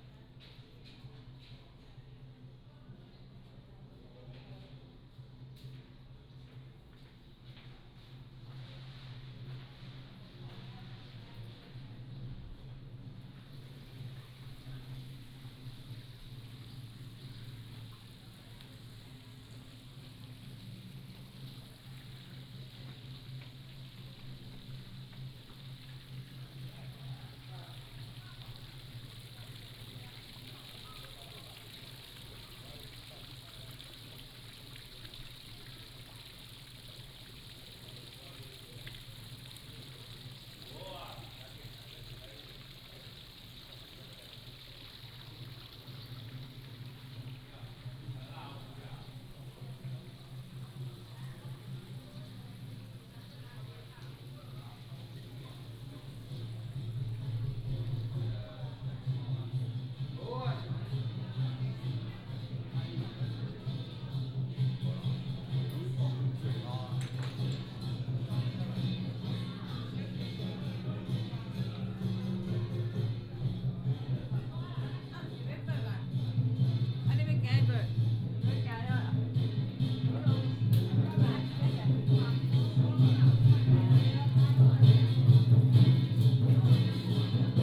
Lugang Mazu Temple, 鹿港鎮 - Walking in the temple
Walking in the temple, Pilgrimage group, firecracker